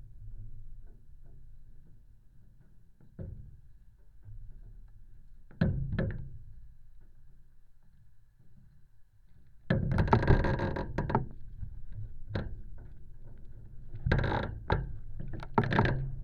Pakruojis, Lithuania, flag stick
Pakruojis manor. a flag stick in the wind. recorded with contact microphones